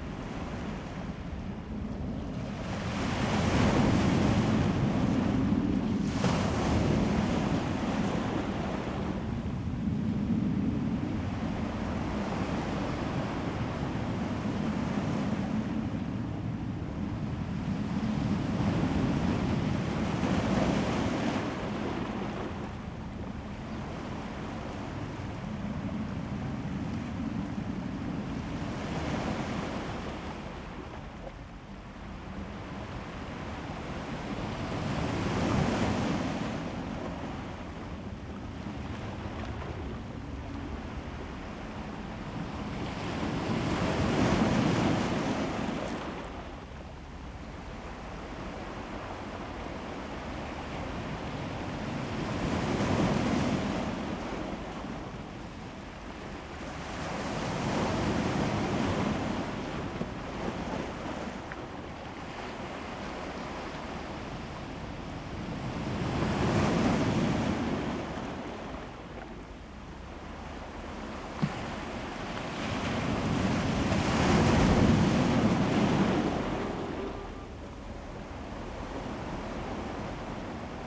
富岡里, Taitung City - rock and the waves
Sound of the waves, Aircraft flying through, The weather is very hot, in the coast near the fishing port
Zoom H2n MS +XY